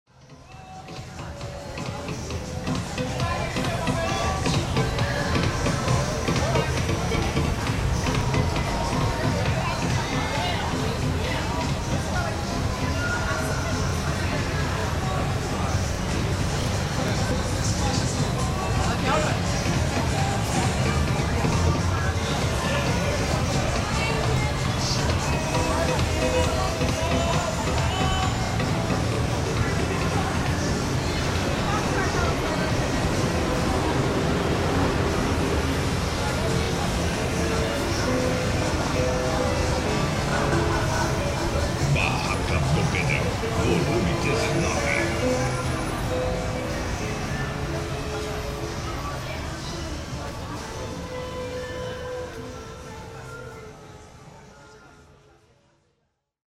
amostra gravada para video/projeção(ECCO/UFMT)
localizada no coreto da Praça Ipiranga
Centro, Cuiabá/MT - BRASIL
obs.: difusão de músicas e anúncios comerciais das lojas no entorno da praça